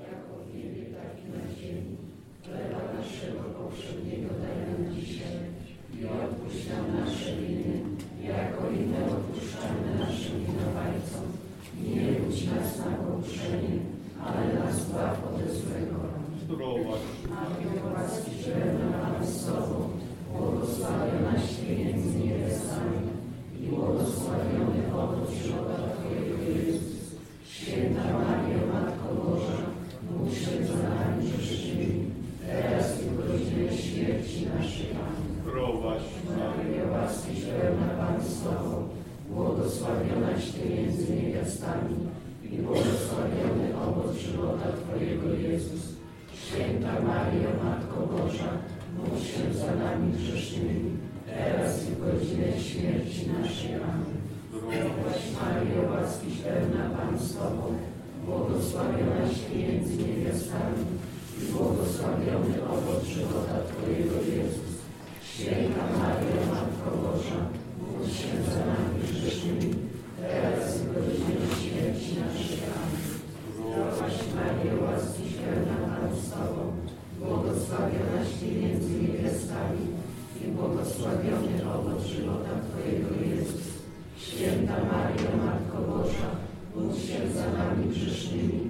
Milk Grotto Church, Bethlehem - Singing, chanting and praying
This church was built upon a cave in which they say Jesus was fed with milk during the first weeks of his life. Today hundreds of religious pelgrims are visiting this place; some of them singing, chanting and praying ritual songs. While I was recording the ambiance, a group of polish tourists came in. (Recorded with Zoom4HN)
יהודה ושומרון, الأراضي الفلسطينية, 29 January, 11:00